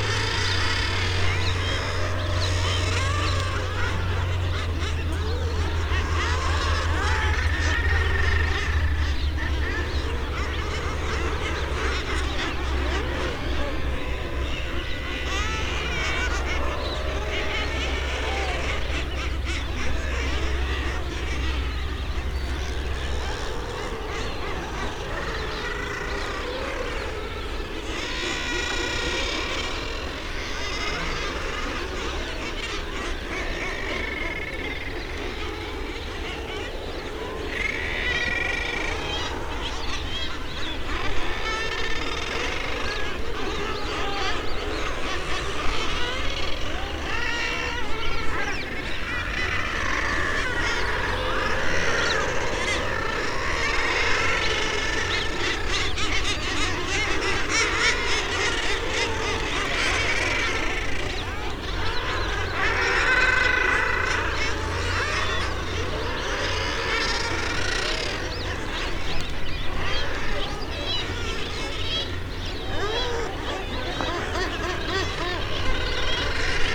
North Sunderland, UK - guillemot colony ...
Staple Island ... Farne Islands ... wall to wall nesting guillemots ... background noise from people ... boats ... cameras etc ... bird calls from kittiwakes ... young guillemots making piping calls ... warm sunny day ... parabolic ...
Seahouses, UK, 28 May 2012, ~12:00